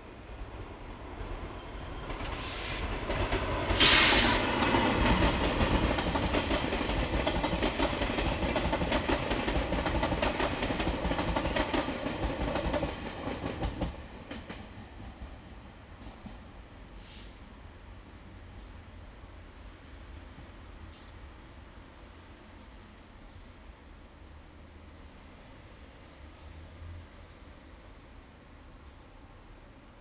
Xiping Rd., Douliu City - Next to the railroad tracks
Next to the railroad tracks, The train passes by
Zoom H2n MS+XY
Yunlin County, Taiwan